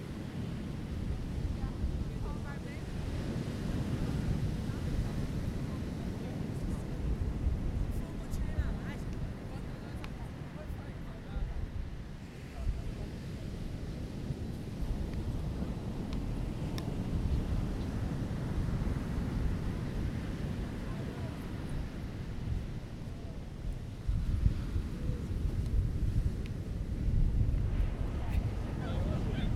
Itacoataira, RJ. - Domingo de praia